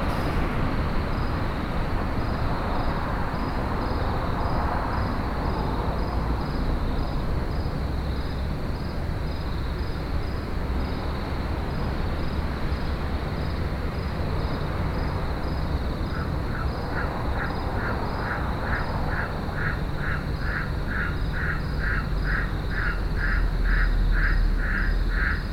{"title": "Parktown, Johannesburg, South Africa - frogs in the gardens hum over Jozi...", "date": "2016-11-08 22:20:00", "description": "listening to the nightly hum of Jozi from a beautiful roof-terrace over the gardens of Parktown...", "latitude": "-26.18", "longitude": "28.02", "altitude": "1695", "timezone": "GMT+1"}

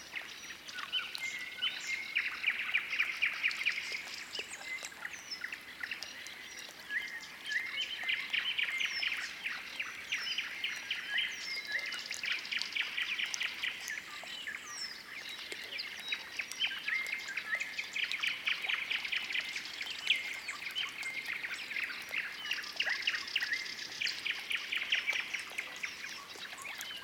{"title": "Rapina Polder evening soundscape, Estonia", "date": "2011-05-29 01:40:00", "description": "made during a late May night time field recording excursion to the Rapina Polder", "latitude": "58.15", "longitude": "27.50", "altitude": "30", "timezone": "Europe/Tallinn"}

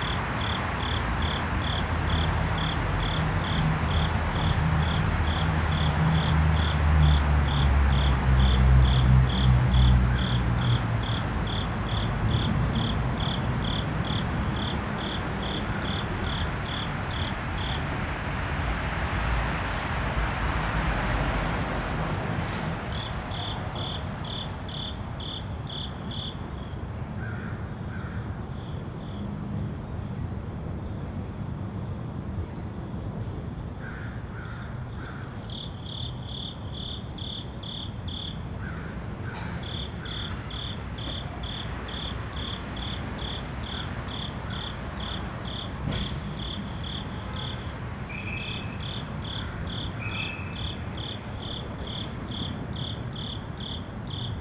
{"title": "Bolton Hill, Baltimore, MD, USA - Cricket", "date": "2016-10-03 08:05:00", "description": "Recorded using onboard Zoom H4n microphones. The sounds of a cricket as well as traffic from North Avenue", "latitude": "39.31", "longitude": "-76.63", "altitude": "51", "timezone": "America/New_York"}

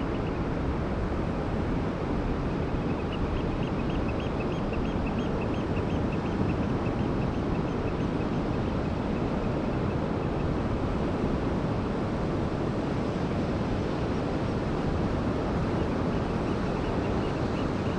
{"title": "Oosterend Terschelling, Nederland - Netherlands, Terschelling, beach and wind sound", "date": "2022-07-11 11:55:00", "description": "Quiet recording on the island Terschelling in the north of the Netherlands. Stereo recording with primo mics.", "latitude": "53.44", "longitude": "5.48", "timezone": "Europe/Amsterdam"}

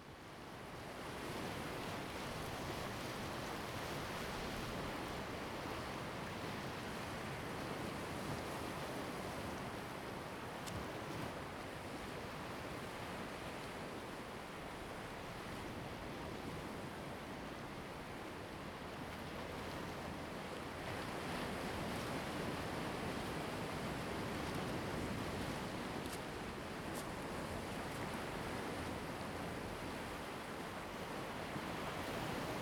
{"title": "Jimowzod, Koto island - the waves", "date": "2014-10-29 17:27:00", "description": "In the beach, Sound of the waves\nZoom H2n MS +XY", "latitude": "22.03", "longitude": "121.55", "altitude": "4", "timezone": "Asia/Taipei"}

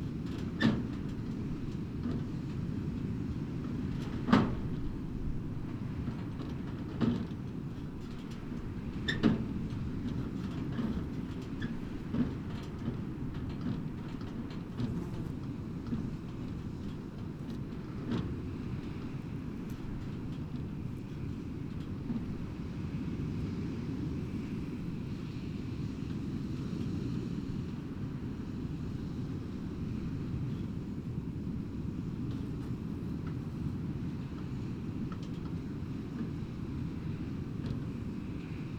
{"title": "Puerto Percy, Región de Magallanes y de la Antártica Chilena, Chile - storm log - abandoned house", "date": "2019-03-06 11:30:00", "description": "Puerto Percy, abandoned house, loose roof, wind SW 8km/h\nCampamento Puerto Percy, build by the oil company ENAP in 1950, abandoned in 2011.", "latitude": "-52.90", "longitude": "-70.27", "altitude": "6", "timezone": "GMT+1"}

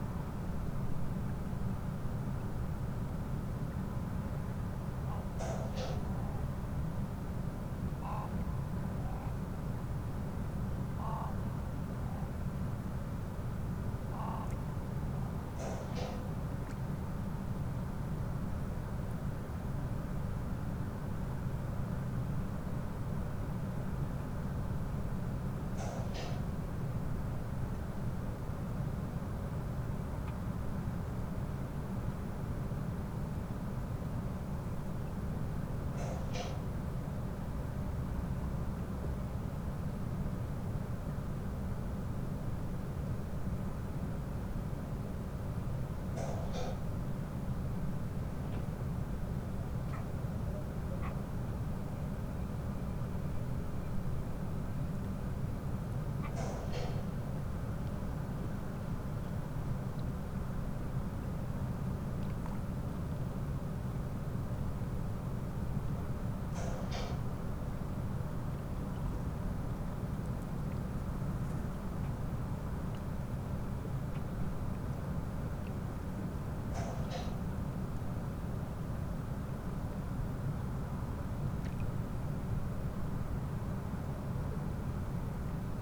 {"title": "lemmer, vuurtorenweg: marina - the city, the country & me: marina berth", "date": "2011-06-20 22:52:00", "description": "mechanical (hydraulic?) noise of a concrete factory (diagonally opposite) with echo\nthe city, the country & me: june 20, 2011", "latitude": "52.84", "longitude": "5.71", "altitude": "1", "timezone": "Europe/Amsterdam"}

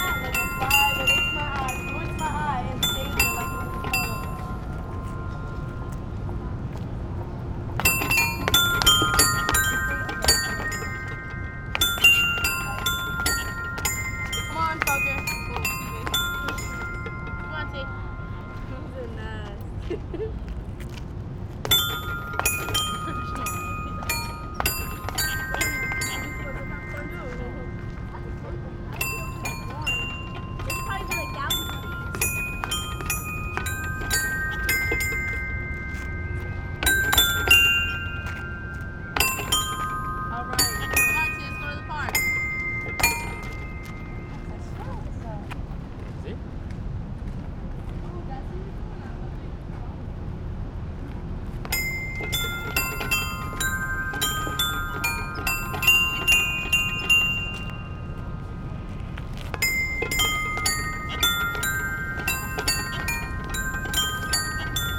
{"title": "Marelle musicale / musical hopscotch in Battery Park, New York", "date": "2010-09-05 16:57:00", "description": "Marelle musicale / musical hopscotch in Battery Park.", "latitude": "40.70", "longitude": "-74.02", "altitude": "2", "timezone": "America/New_York"}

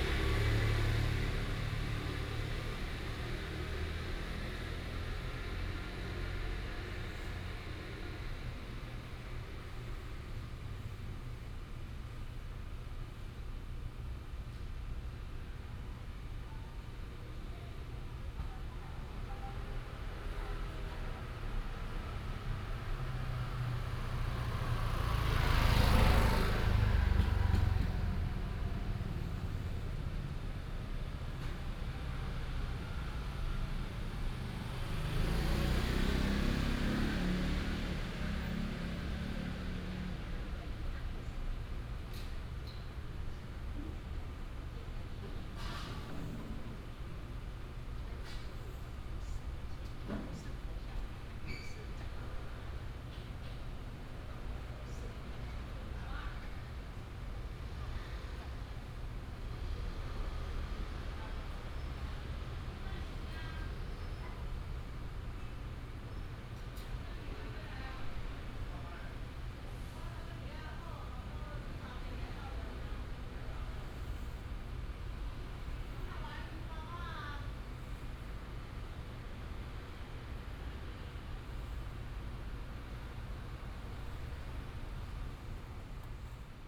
{"title": "東光新村, Hsinchu City - In the quiet old community", "date": "2017-09-27 16:34:00", "description": "In the quiet old community, traffic sound, Binaural recordings, Sony PCM D100+ Soundman OKM II", "latitude": "24.80", "longitude": "120.99", "altitude": "39", "timezone": "Asia/Taipei"}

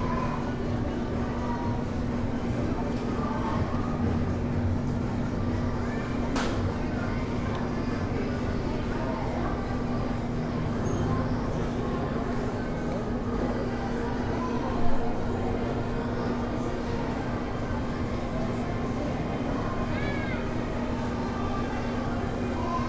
{"title": "Portal Guerrero, Centro, Centro, Gto., Mexico - En las mesas de la parte de afuera de la nevería Santa Clara zona peatonal.", "date": "2022-07-25 13:43:00", "description": "At the tables outside the Santa Clara ice cream parlor pedestrian zone.\nI made this recording on july 25th, 2022, at 13:43 p.m.\nI used a Tascam DR-05X with its built-in microphones and a Tascam WS-11 windshield.\nOriginal Recording:\nType: Stereo\nEsta grabación la hice el 25 de julio 2022 a las 13:43 horas.", "latitude": "21.12", "longitude": "-101.68", "altitude": "1808", "timezone": "America/Mexico_City"}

